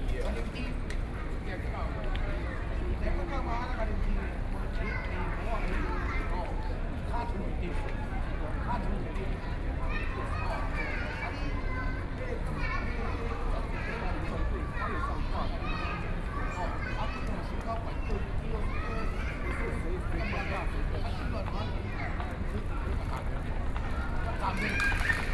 National Theater, Taiwan - Chat
Processions and assemblies of people gathered together to break chat, Binaural recordings, Sony PCM D50 + Soundman OKM II